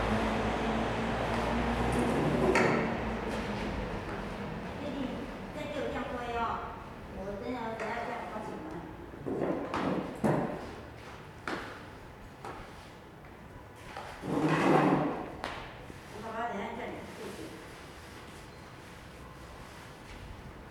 海山宮, Zhonghe Dist., New Taipei City - In the temple
In the temple, Child and mother, Traffic Sound
Sony Hi-MD MZ-RH1 +Sony ECM-MS907
16 February 2012, 18:30